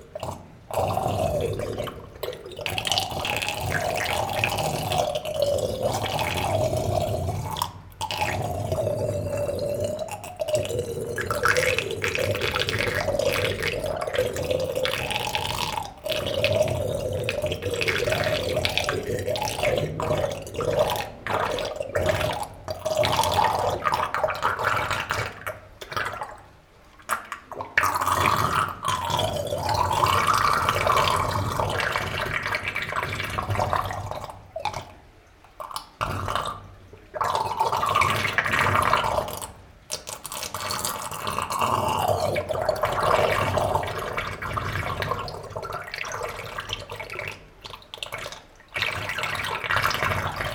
Volmerange-les-Mines, France - Vomiting tube
Into the underground iron mine. This is a place I know as the farting tube. Water is entering into a small tube, below a concrete wall. A small vortex makes farts. But today, there's very much more water as habitually, essentially because it's raining a lot since 2 months. Lot of water means this tube is vomiting. Indeed, water constantly increases and decreases, making this throw up belching sounds. Is this better than farting ? Not sure !